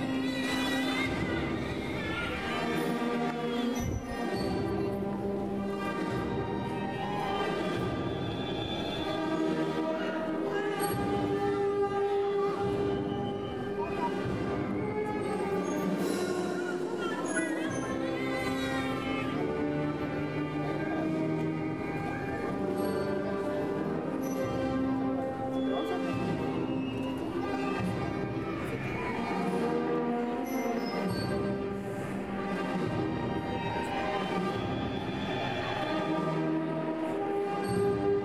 Antigua Guatemala, Guatemala - Maria procession